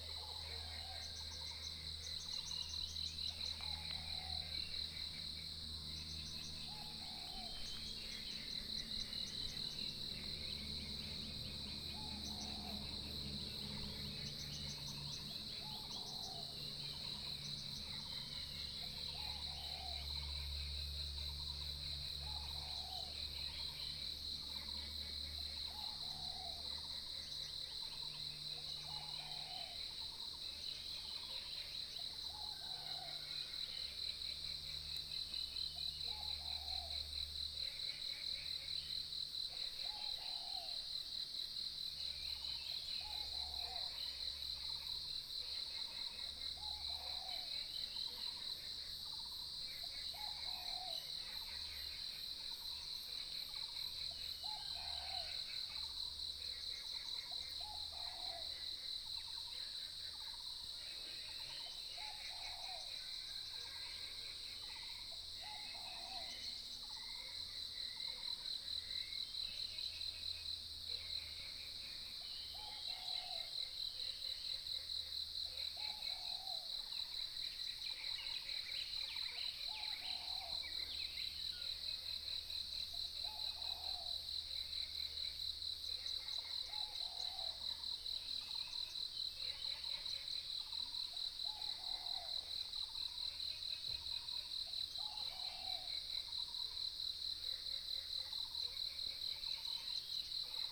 {"title": "種瓜路, 埔里鎮桃米里 - Early morning", "date": "2015-06-10 05:32:00", "description": "Early morning, Bird calls, Croak sounds, Insects sounds", "latitude": "23.94", "longitude": "120.92", "altitude": "503", "timezone": "Asia/Taipei"}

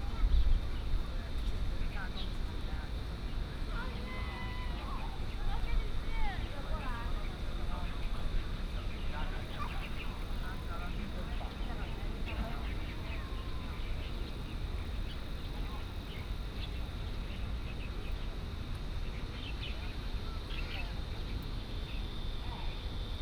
{"title": "瑠公圳水源池, National Taiwan University - Bird sounds", "date": "2016-02-22 11:29:00", "description": "Bird sounds, Ecological pool, In the university", "latitude": "25.02", "longitude": "121.54", "altitude": "16", "timezone": "Asia/Taipei"}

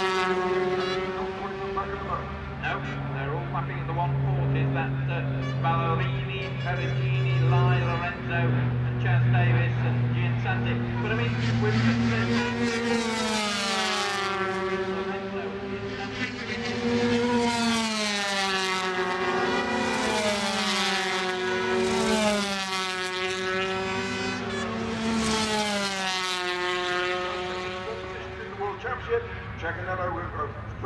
{"title": "Castle Donington, UK - British Motorcycle Grand Prix 2002 ... 125 ...", "date": "2002-07-14 11:20:00", "description": "125cc motorcycle race ... part two ... Starkeys ... Donington Park ... the race and associated noise ... Sony ECM 959 one point stereo mic to Sony Minidisk ...", "latitude": "52.83", "longitude": "-1.37", "altitude": "81", "timezone": "Europe/London"}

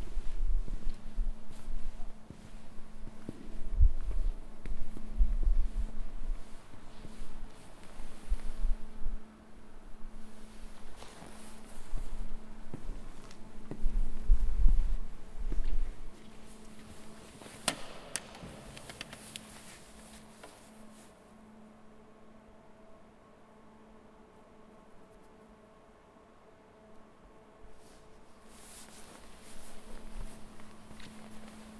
{"title": "San Abbondio, Kirche im Innenraum", "date": "2010-12-25 12:50:00", "description": "San Abbondio, Aussicht auf Lago Maggiore, Tessinerdorf, Kirche, schönster Kirchplatz über dem See", "latitude": "46.11", "longitude": "8.77", "altitude": "319", "timezone": "Europe/Zurich"}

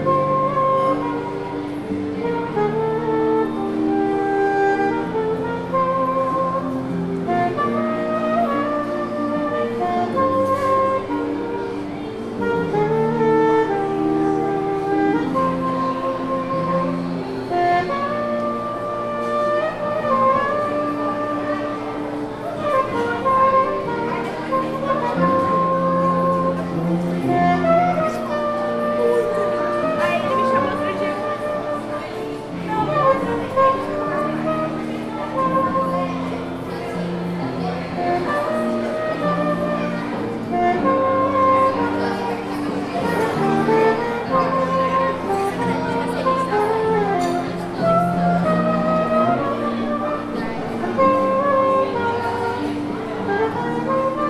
{"title": "Shopping Aricanduva - Avenida Aricanduva - Jardim Marilia, São Paulo - SP, Brasil - Saxofonista e Baixista em um café", "date": "2019-04-06 19:21:00", "description": "Gravação de um saxofonista e um baixista feita de frente a um café no Shopping Leste Aricanduva durante o dia 06/04/2019 das 19:21 às 19:33.\nGravador: Tascam DR-40\nMicrofones: Internos do gravador, abertos em 180º", "latitude": "-23.57", "longitude": "-46.50", "altitude": "757", "timezone": "America/Sao_Paulo"}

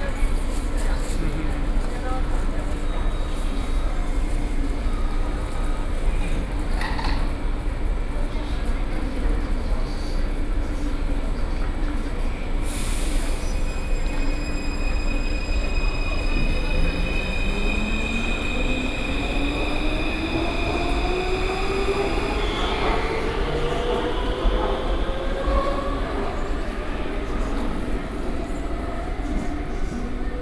{"title": "Zhongxiao Dunhua Station, Taipei City - In the MRT station", "date": "2012-11-02 20:58:00", "latitude": "25.04", "longitude": "121.55", "altitude": "17", "timezone": "Asia/Taipei"}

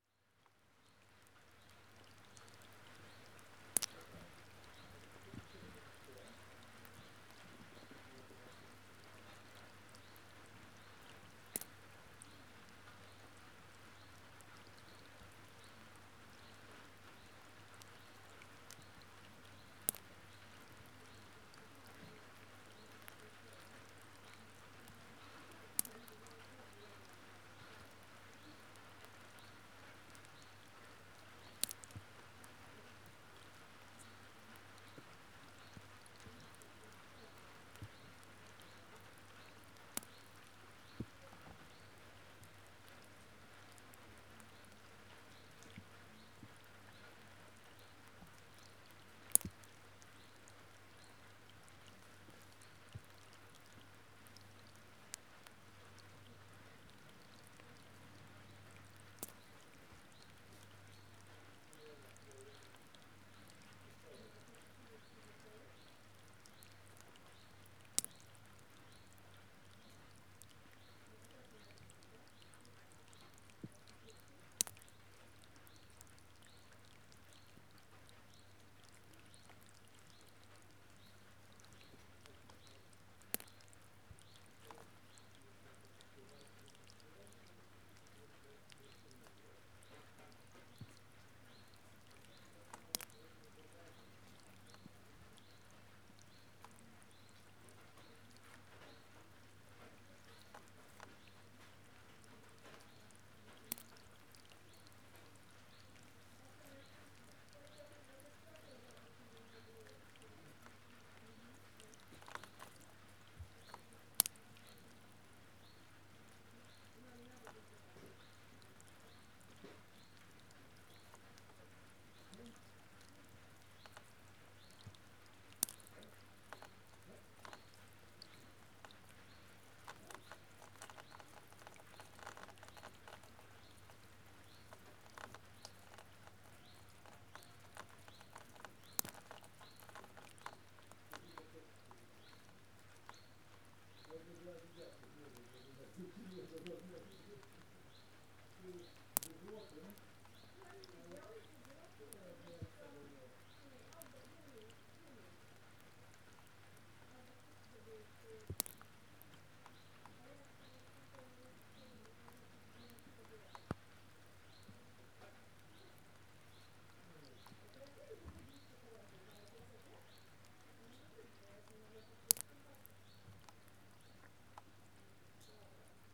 {"title": "Sasino, summerhouse at Malinowa Road, porch - drop drill", "date": "2014-08-15 13:41:00", "description": "rain drops sparsely falling down from a roof into one particular spot, draining a muddy hole in the yard floor. rustle of a nearby pond. birds and conversation in the background.", "latitude": "54.76", "longitude": "17.74", "altitude": "23", "timezone": "Europe/Warsaw"}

